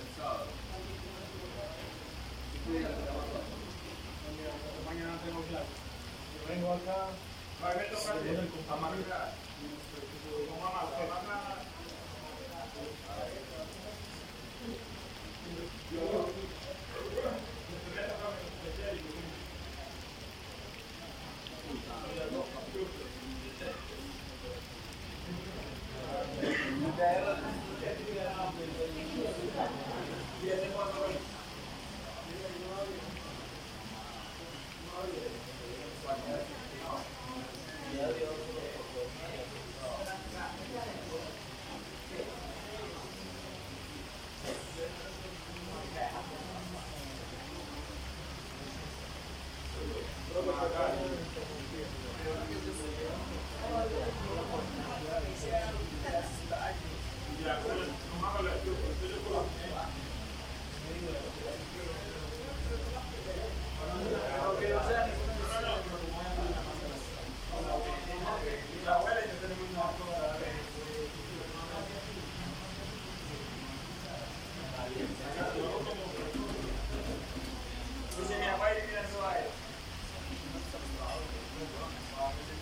Descripción
Sonido Tónico: Lluvia, gente hablando
Señal sonora: Carros pasando y grito
Micrófono dinámico (Celular)
Altura 1.33 cm
Duración 3:13
Grabado por Luis Miguel Henao - Daniel Zuluaga Pérez
23 September 2021, ~16:00